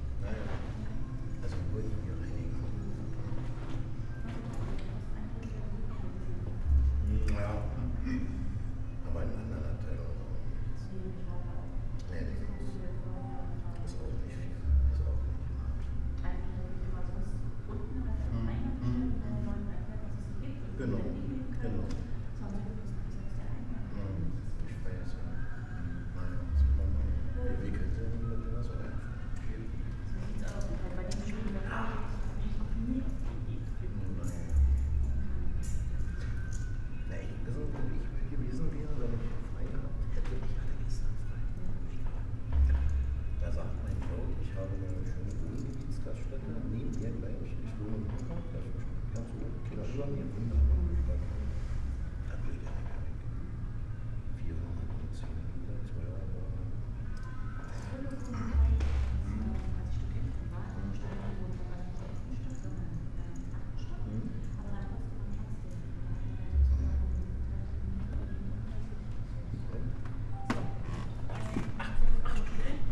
Museen Dahlem, Berlin, Germany - steps hearer
walk, wooden floor and sonic scape at Museen Dahlem, "Probebühne 1", sounds of paper, small talks
Deutschland, European Union, 19 May 2013